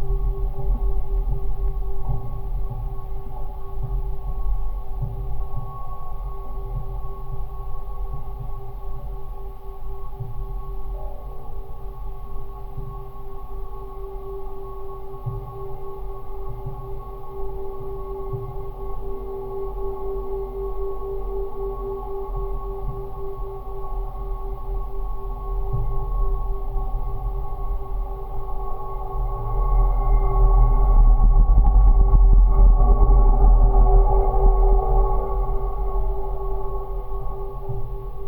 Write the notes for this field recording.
Abandoned gas station. Geophone on pillar holding the roof